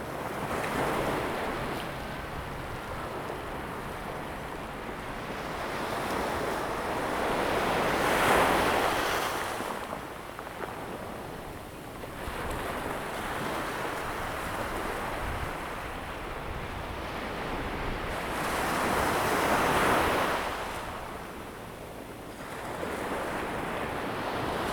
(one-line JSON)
{"title": "Checheng Township, Pingtung County - at the beach", "date": "2018-04-02 17:21:00", "description": "at the beach, Sound of the waves\nZoom H2n MS+XY", "latitude": "22.07", "longitude": "120.71", "altitude": "1", "timezone": "Asia/Taipei"}